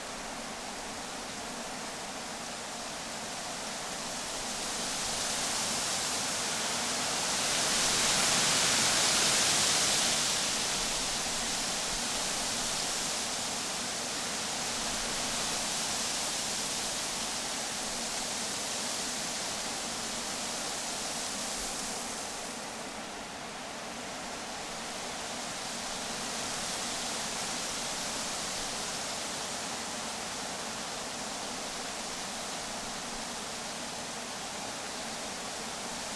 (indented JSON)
{
  "title": "Lone Pine, CA, USA - Aspen and Cottonwood Trees Blowing in Wind",
  "date": "2022-08-24 17:00:00",
  "description": "Metabolic Studio Sonic Division Archives:\nAspen and Cottonwood tree leaves rustling in the wind. Recorded in Cottonwood Canyon using H4N with to small lav microphones attached directly to the tree branches",
  "latitude": "36.44",
  "longitude": "-118.09",
  "altitude": "1643",
  "timezone": "America/Los_Angeles"
}